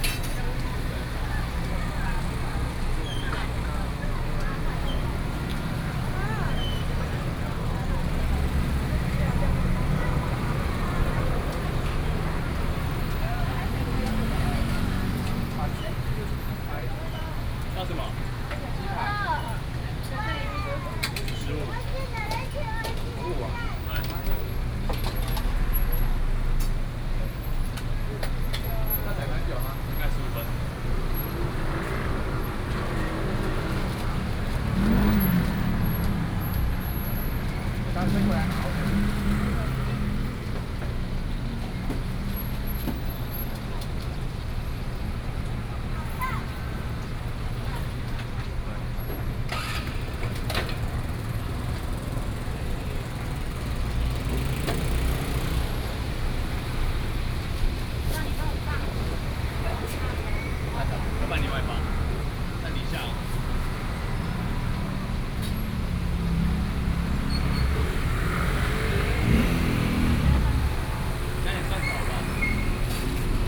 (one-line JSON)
{"title": "Zhengqi N. Rd., Taitung City - Fried chicken shop", "date": "2014-09-06 19:18:00", "description": "In the street, Fried chicken shop, Traffic Sound", "latitude": "22.75", "longitude": "121.15", "altitude": "18", "timezone": "Asia/Taipei"}